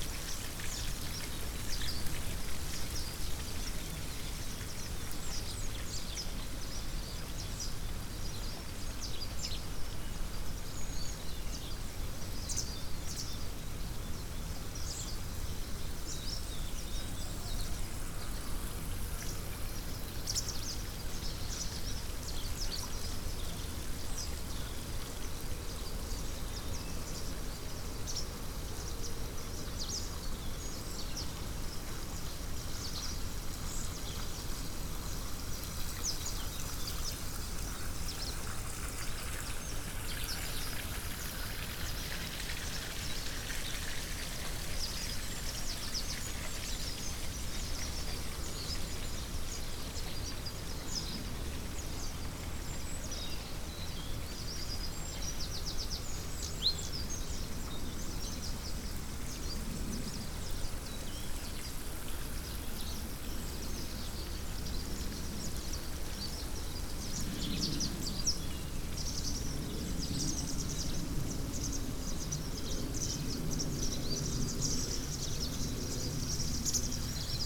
Battle Sewage Works, East Sussex, UK - Battle Sewage Works with Pied Wagtails
Recorded close to Battle Sewage Works with Tascam DR-05 and wind muff. Sounds: circular rotating settlement and filter tanks, 80-100 pied wagtails attracted by the insects and several hoots from passing trains.